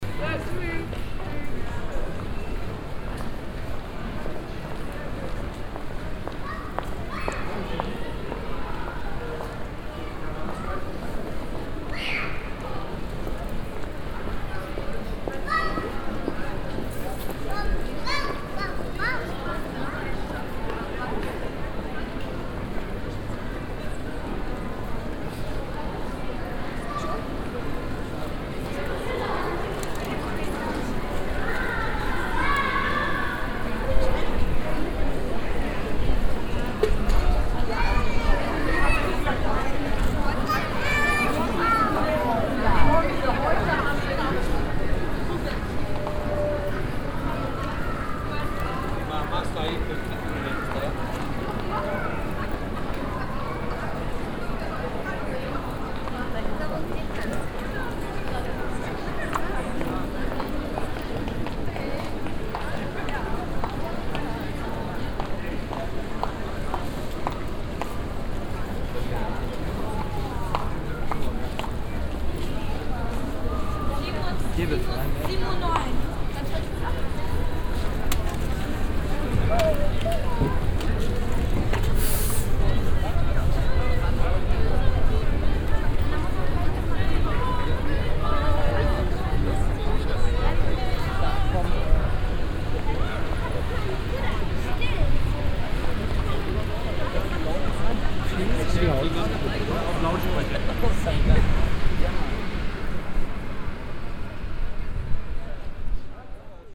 dortmund, in the city shopping zone
early afternoon - in the city shopping zone - steps on the stone pavement and voices of passing by people
soundmap nrw - social ambiences and topographic field recordings